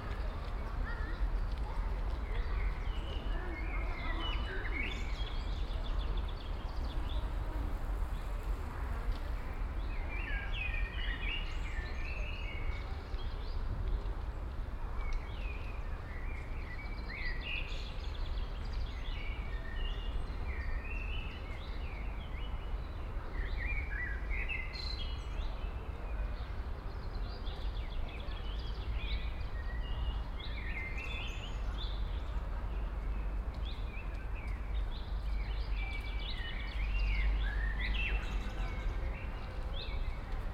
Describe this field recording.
Soundscape of a more quiet part of town. A distant siren, birds (blackbird, robin, sparrow, chaffinch, pigeon, gull), barking dogs, a few slow cars, pedestrians, children, bicycles, chimes of a church bell. Binaural recording, Sony PCM-A10, Soundman OKM II classic microphone with ear muff for wind protection.